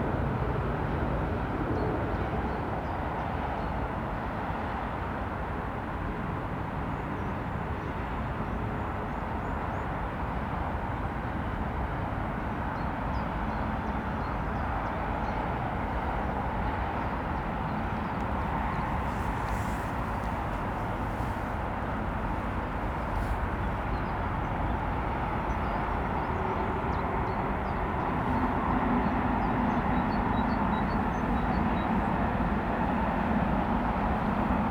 From the middle of the bridge, Strakonická, Velká Chuchle-Barrandov, Czechia - From the middle of the bridge
As well as a singletrack railway line there is a public footpath across this bridge. From here there are fantastic views of the river Vltava and the extraordinary, transport dominated, soundscape is extremely loud. The spectacular valley geography concentrates all the major transport systems – road, rail and tram – into this one bottleneck so they all run close to and parallel with the river. The roads are continuously busy creating a constant roar of traffic that fills the valley with sound. It seems even louder high up, as on this bridge or from the surrounding hillsides. In fact, when standing mid bridge one hears almost nothing but the immersive traffic, except when planes thunder directly above to land at Prague airport or when occasional trains power past only two meters from your ears. The contrast between the expansive views and the overwhelming soundscape is extreme.
In former times Braník Bridge was known as the Bridge of the Intelligentsia.